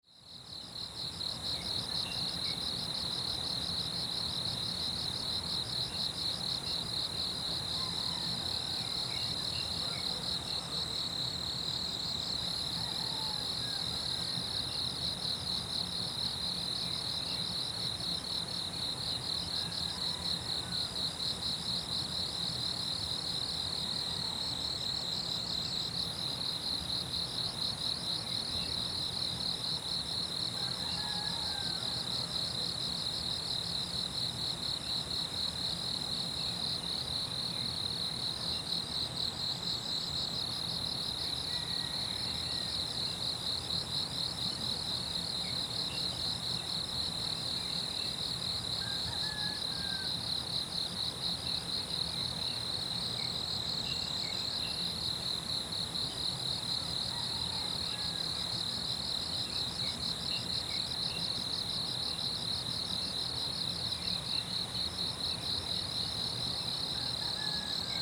水上巷桃米里, Puli Township - In the grass
Early morning, Bird sounds, Insect sounds, In the grass, Chicken sounds
Zoom H2n MS+XY
8 June 2016